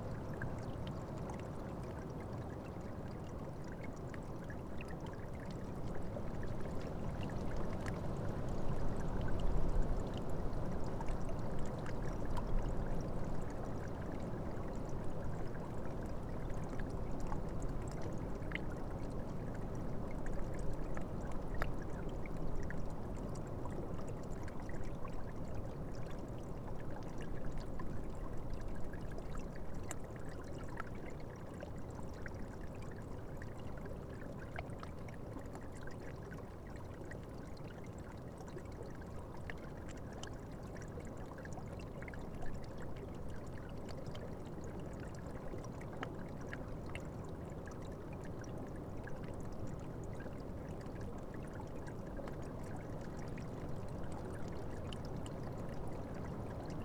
Lithuania, Utena, stream under ice
frozen stream and water running under ice
Utenos apskritis, Lietuva